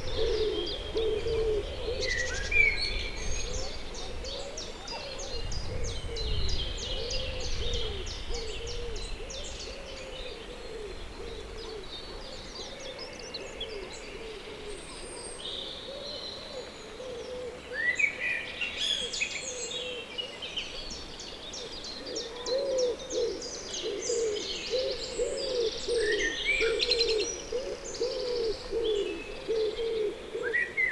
Dartington, Devon, UK - soundcamp2015dartington river wood pigeon